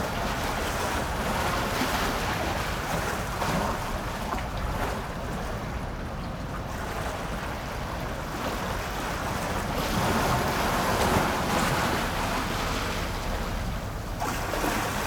Wind, Waves, Small pier
Sony PCM D50